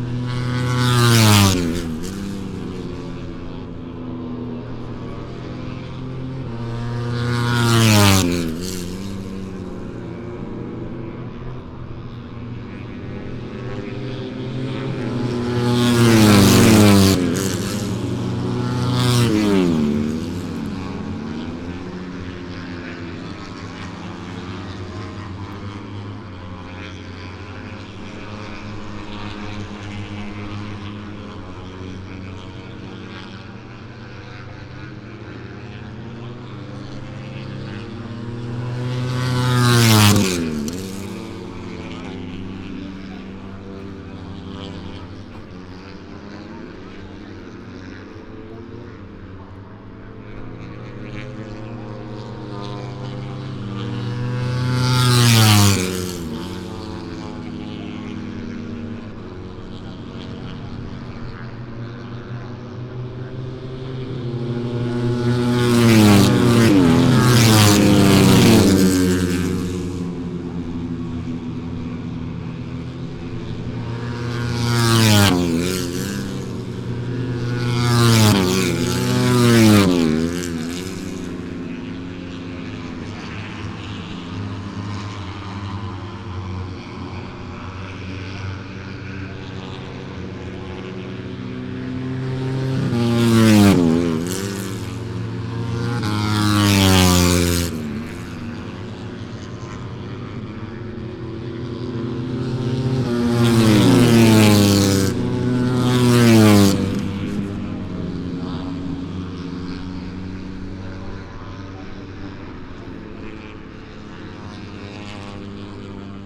Silverstone Circuit, Towcester, UK - British Motorcycle Grand Prix 2018 ... moto one ...
British Motorcycle Grand Prix 2018 ... moto one ... free practice three ... maggotts ... lavalier mics clipped to sandwich box ...
25 August 2018